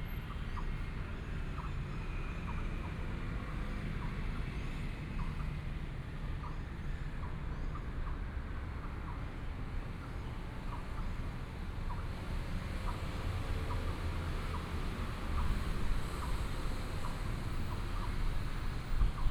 in the Park, Hot weather, Birds
Zuoying District, 左營大路2-70號